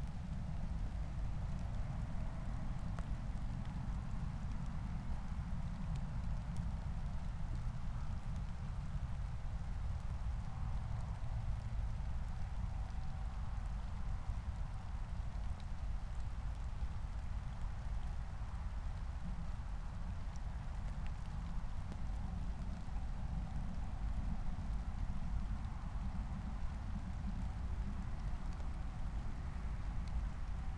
Moorlinse, Berlin Buch - near the pond, ambience
21:19 Moorlinse, Berlin Buch